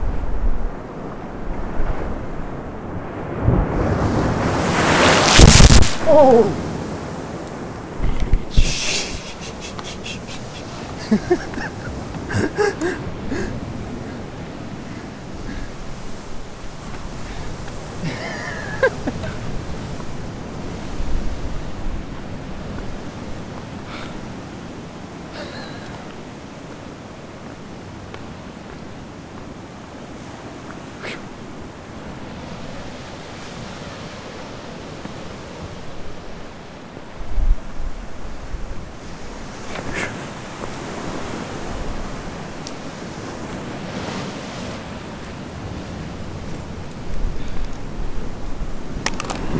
Royal National Park, NSW, Australia - Recording near a rockpool and then.. SPLASH!! (Summer)
I was trying to record the explosion of the waves splashing onto this rockpool, but the microphone and I very quickly were splashed on instead.
Recorded with an Olympus LS-5.
Lilyvale NSW, Australia, 23 January 2015, 07:00